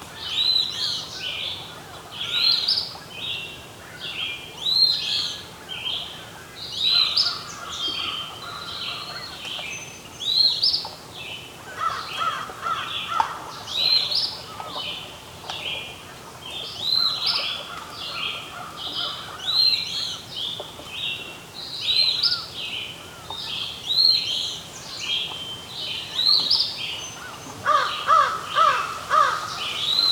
Kagawong, ON, Canada - Dawn chorus
Early morning birdsong, Lake Huron waves in distance. Recorded with LOM Uši Pro omni mics and Tascam DR-680mkII. EQ and levels postprocessing.